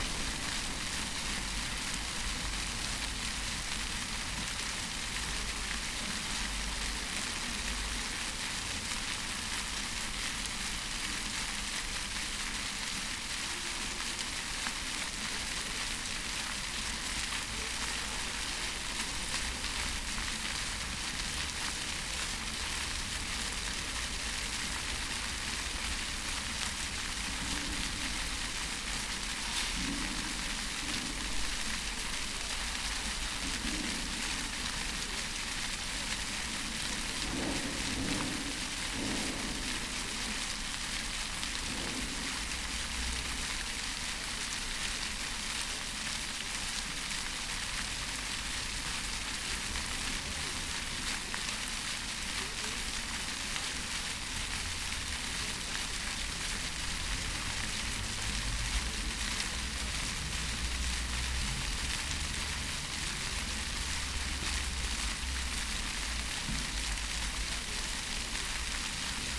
wien x - columbusplatz
wien x: brunnen am columbusplatz
Vienna, Austria, 29 September 2014, 10:41